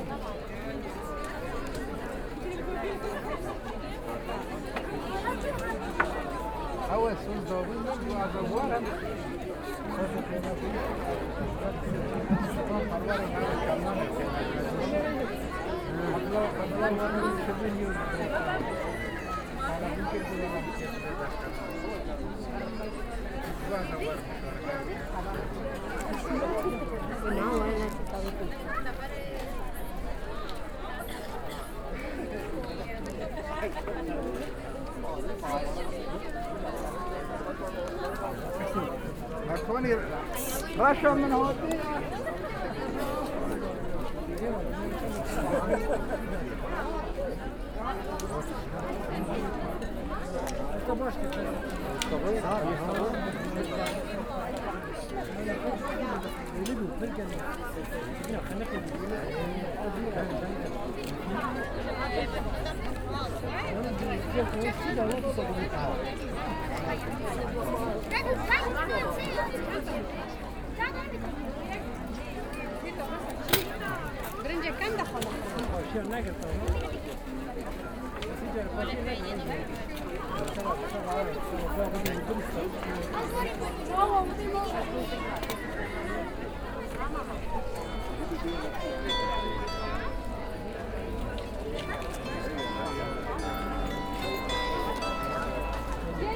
Bismarckstraße, Hamm, Germany - Ukrainian song at Refubeats 2022
After a break of two years due to the pandemic, the big festival of the refugee aid Hamm takes place again. People from many different countries who have found and created their new home in the city are the hosts and artistic performers at this festival.
Nach zwei Jahren pandemiebedingter Pause findet das grosse interkulturelle Fest der Flüchtlingshilfe Hamm wieder statt. Menschen aus vielen verschiedenen Ländern, die in der Stadt ihren neuen Lebensmittelpunkt gefunden und geschaffen haben, sind bei diesem Fest die Gastgeber*innen und künstlerischen Darbieter*innen.
Nordrhein-Westfalen, Deutschland